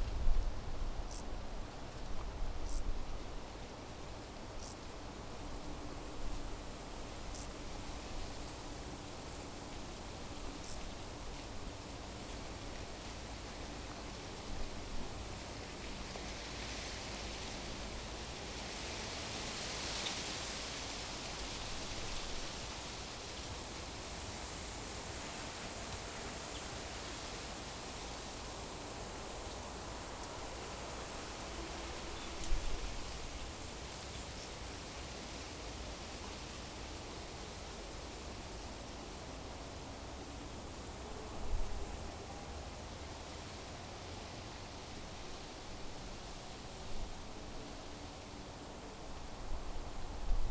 berlin wall of sound-n.e. of eiskeller. j.dickens160909
Schönwalde-Glien, Germany